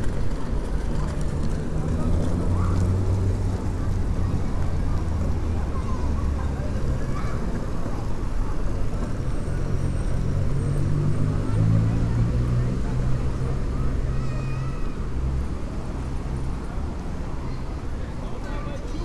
R. Canuto Abreu - Vila Reg. Feijó, São Paulo - SP, 03336-060, Brasil - Domingo no Ceret